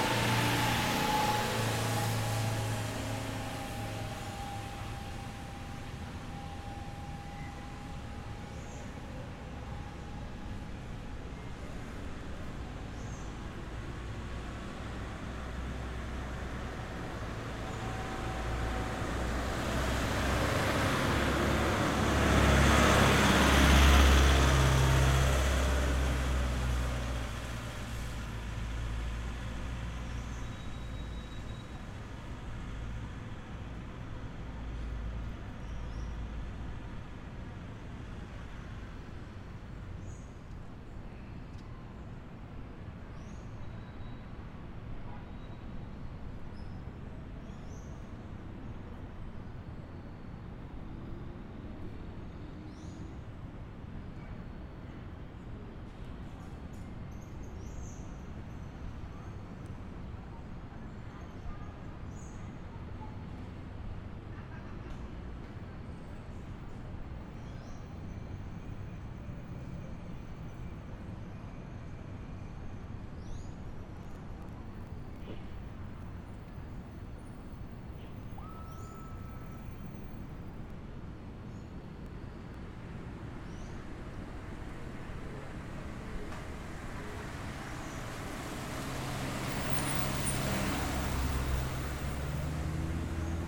Cl., Medellín, El Poblado, Medellín, Antioquia, Colombia - Entre dos unidades
Se aprecian los sonidos de los vehículos que suben y bajan la loma
además de los pasos de algunas personas y algunas voces.
1 September 2022, ~06:00